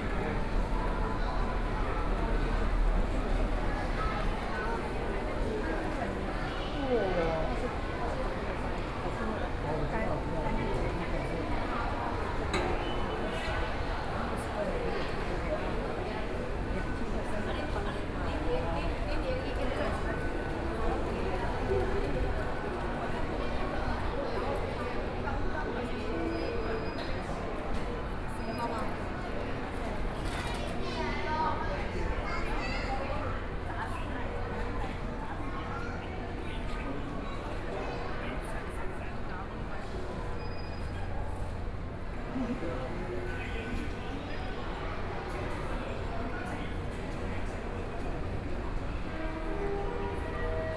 in the MRT Station, Sony PCM D50 + Soundman OKM II
Datong District, Taipei City, Taiwan